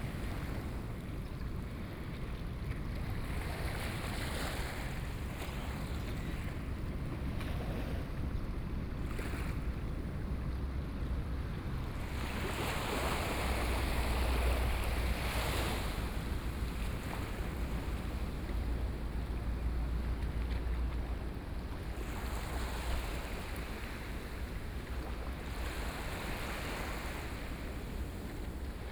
頭城鎮大里里, Yilan County - Sound of the waves
Traffic Sound, Sound of the waves, The sound of a train traveling through, Very hot weather
Sony PCM D50+ Soundman OKM II
Yilan County, Taiwan, 21 July 2014